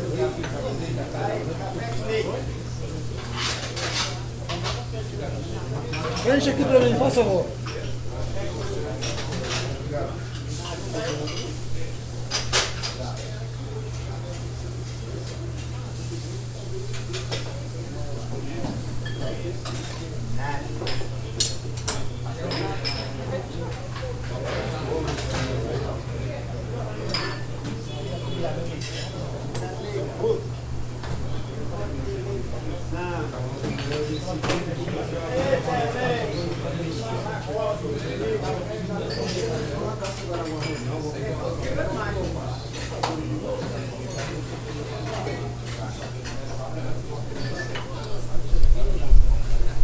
R. Frei Caneca - Consolação, São Paulo - SP, 01307-003, Brasil - Padaria na Rua Frei Caneca
#soundscape #paisagemsonora #padaria #bakery #saopaulo #sp #brazil #brasil